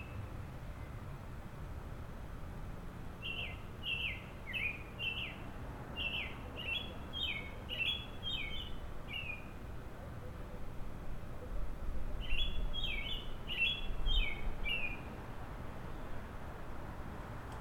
Ellsworth Avenue, Toronto, Canada - Robin singing
Robin singing on a quiet street.